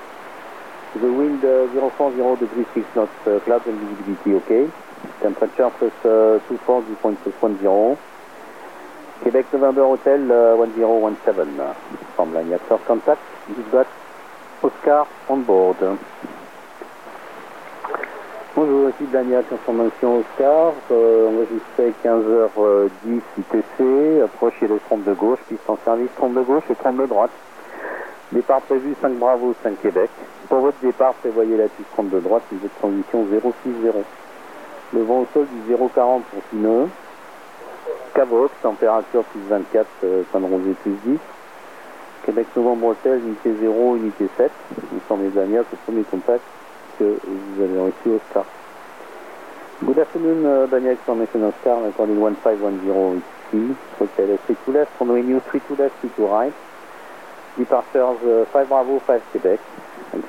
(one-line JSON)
{"title": "Avenue Camille Flammarion, Toulouse, France - radio wave", "date": "2021-05-27 18:10:00", "description": "astronomical observatory\nradio wave scanner, Blagnac airport track\nCaptation : Uniden UBC 180 XLT / Diamond RH795 / Zoom H4n", "latitude": "43.61", "longitude": "1.46", "altitude": "195", "timezone": "Europe/Paris"}